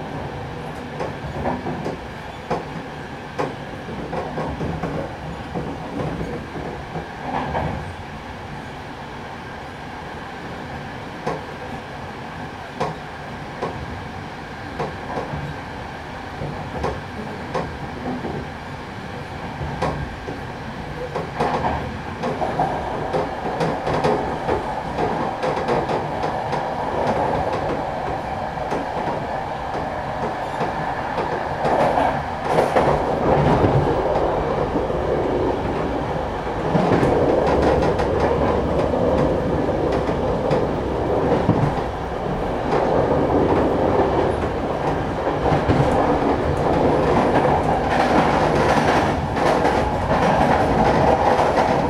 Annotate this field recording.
On the way to Prague the train rattles less and less on the rails. Here, arriving at Pardovice station. Coming all the way from Turkey, the auditory impression is distinctly: less romantic sounds on more western tracks.